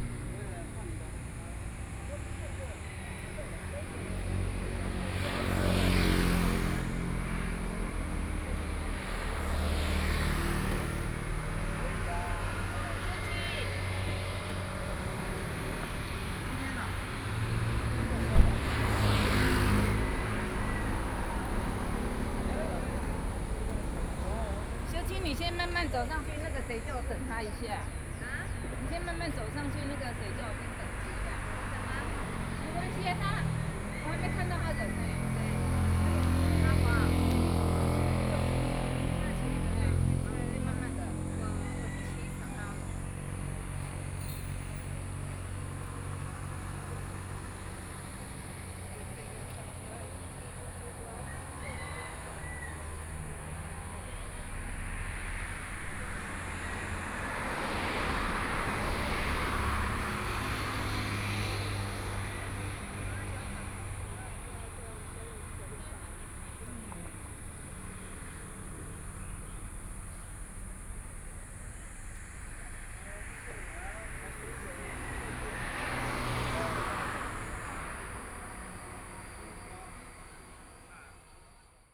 Shangzhi Rd., Hualien City - on the roadside
Selling vegetables on the roadside, Traffic Sound, Crowing sound